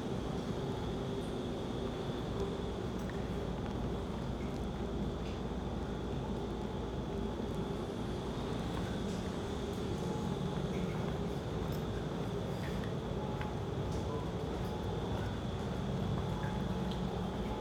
Garzweiler, brown coal mining area